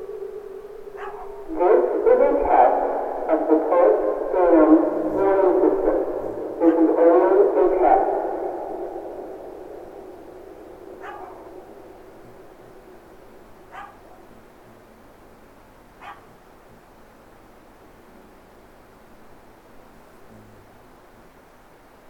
King County, WA, USA - Tolt Dam Flood Siren in Forest
Recorded on porch of my house with Tascam DR-05. This is the weekly test of the Tolt River Flood Warning System. This is a series of speakers setup in the countryside to broadcast a warning if dam breaches. My house is surrounded by old growth cedar forest, so you get a strange reverb from the forest itself.